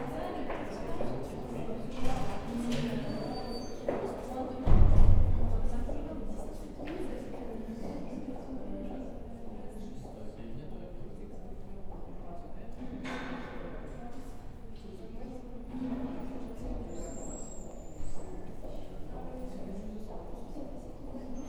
L'Hocaille, Ottignies-Louvain-la-Neuve, Belgique - Socrate audience
In the corridors, a small time before the courses.
Ottignies-Louvain-la-Neuve, Belgium, 18 March 2016